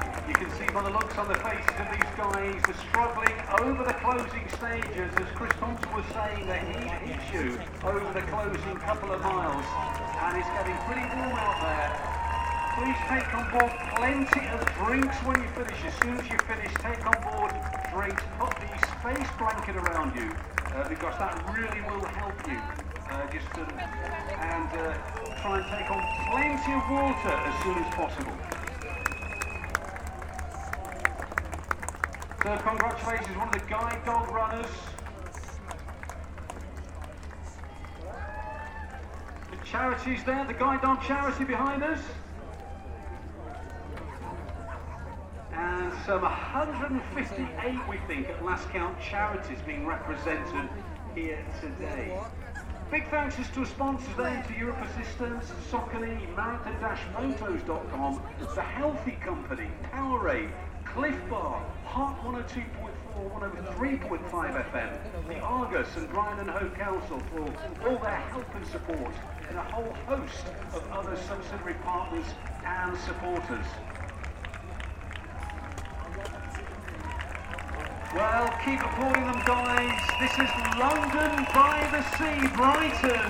The finishing line at the first Brighton Marathon. A slightly irritating event commentator!
18 April 2010, 12:30